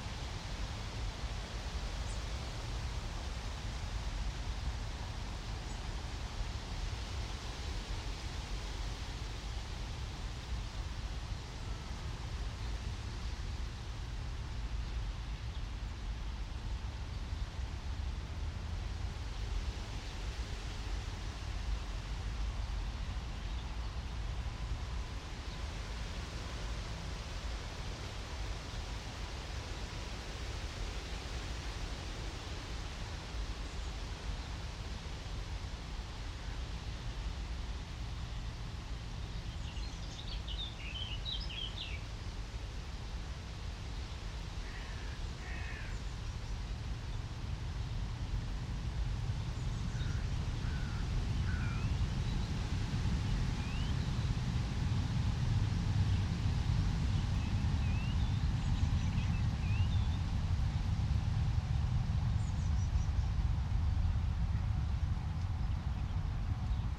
The nightingale's perspective, The Wet Triangle, Brehmestraße, Berlin, Germany - Windy afternoon, soft poplar leaves and a very heavy train
The lazy end of the afternoon, warmish and breezy. A single sparrow chirps, there a snatches of lesser whitethroat and blackcap, but most birds are quiet. A very heavy train passes.
2 June 2022, ~15:00